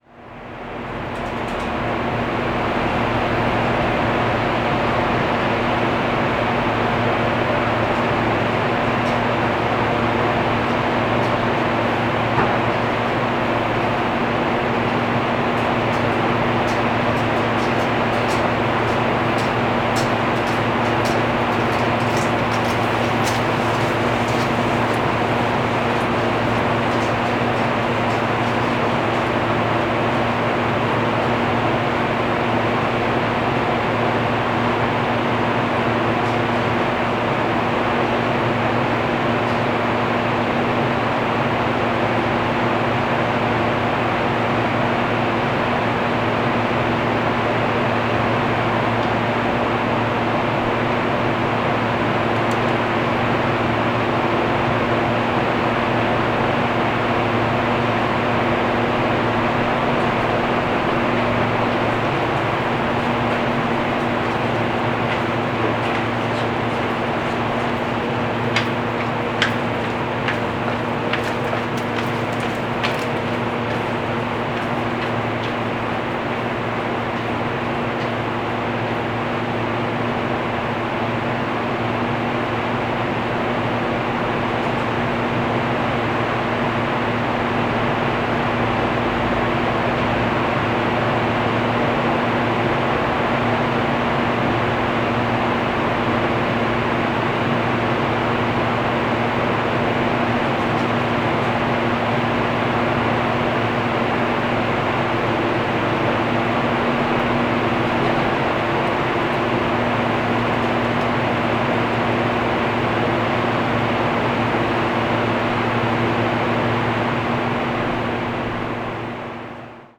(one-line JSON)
{"title": "Wilsons Ct, Belfast, UK - The Entries", "date": "2020-03-27 14:40:00", "description": "A hidden gem between buildings and stores, having local bars and pubs that will have your locals or tourist wondering about trying to figure out where they may be within the city. Or just a casual shortcut between streets, to get to one place just a little quicker. This has been overtaken by the noise of generators and there is no longer any drunken chatter in these passages.", "latitude": "54.60", "longitude": "-5.93", "altitude": "7", "timezone": "Europe/London"}